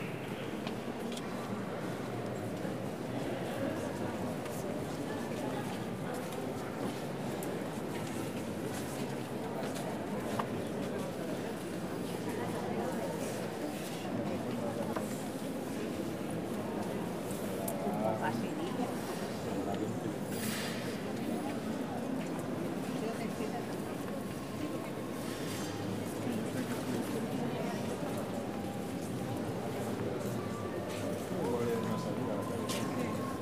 Catedral de Barcelona, Barcelona, Spain - Walking inside Barcelona's gothic cathedral
Walking around in a crowd of visitors to the cathedral. The most interesting sounds are the footsteps.
Zoom H4n
7 December 2014